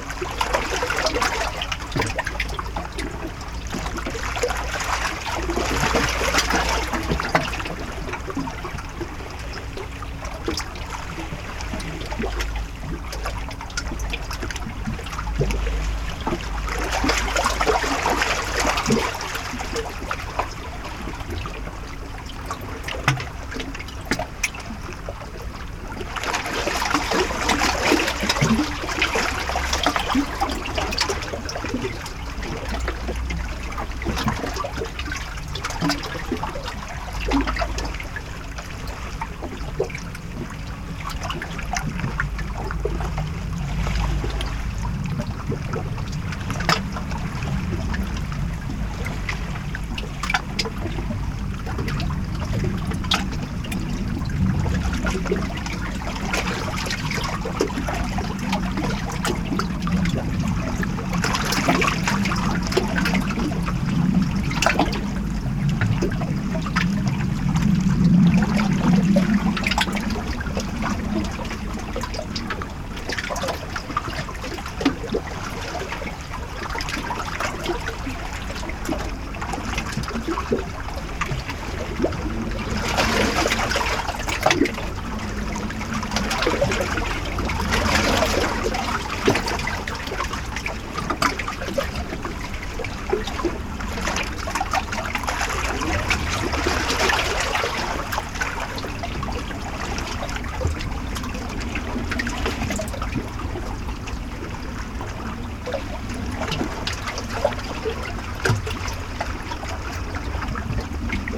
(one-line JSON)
{"title": "Avenue Gustave Doret, Lausanne, Suisse - Le lac Léman la nuit, devant le Théâtre de Vidy à Lausanne", "date": "2021-01-06 23:30:00", "description": "Enregistrement binaural: à écouter au casque.\nBinaural recording: listen with headphones.", "latitude": "46.51", "longitude": "6.61", "altitude": "370", "timezone": "Europe/Zurich"}